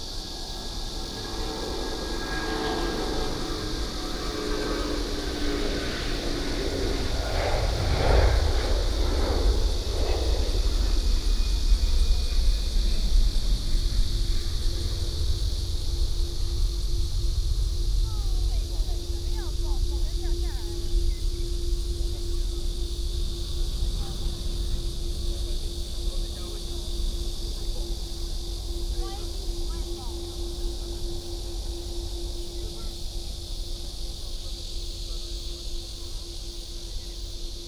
大海里, Dayuan Dist., Taoyuan City - Cicadas and the plane
Cicadas and Birds sound, Near the airport, take off, Many people are watching the plane